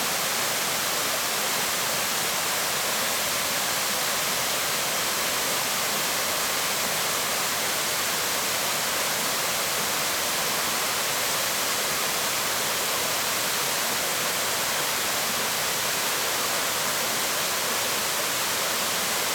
撒固兒瀑布, Xiulin Township - waterfall
waterfall
Zoom H2n MS+XY +Sptial Audio